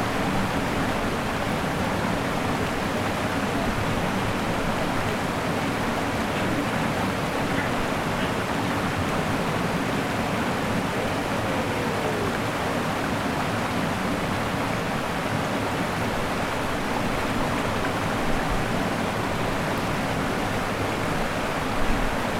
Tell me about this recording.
Sous le Pont Noir, pont ferroviaire qui enjambe le Sierroz au débit très moyen en cette saison.